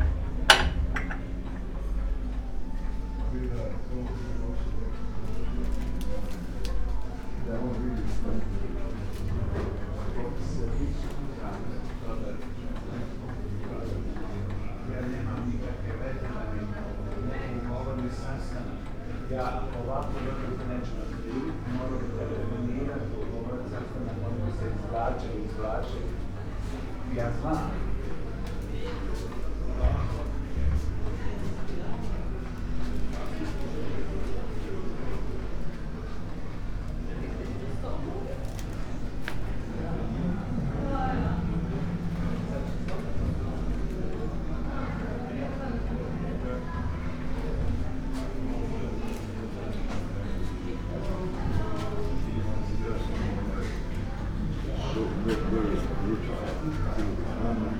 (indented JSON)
{
  "title": "Eufrazijeva ulica, Poreč, Croatia - quiet street shop",
  "date": "2013-07-20 12:12:00",
  "description": "sounds of old clock and wooden furniture, making streets hum softer",
  "latitude": "45.23",
  "longitude": "13.59",
  "altitude": "3",
  "timezone": "Europe/Zagreb"
}